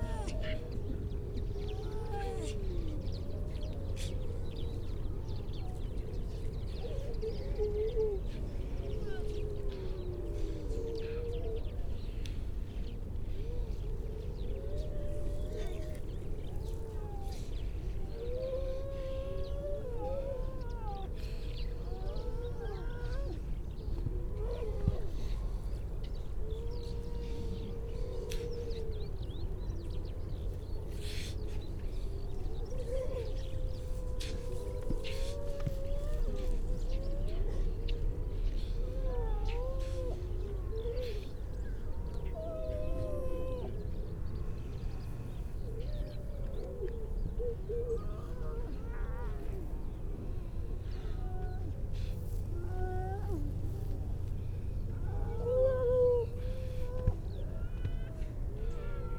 Unnamed Road, Louth, UK - grey seals soundscape ...
grey seals soundscape ... generally females and pups ... parabolic ... bird calls ... skylark ... crow ... redshank ... pied wagtail ... linnet ... starling ... pink-footed geese ... all sorts of background noise ...